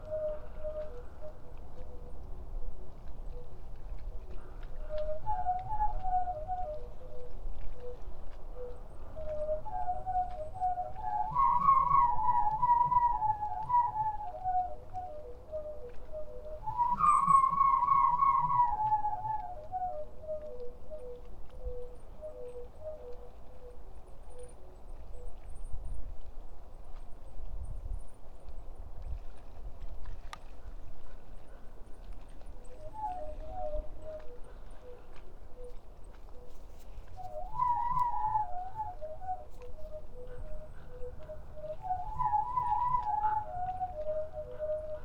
Žirgų g., Utena, Lithuania - Vind and crow
Vind and crow
19 November 2018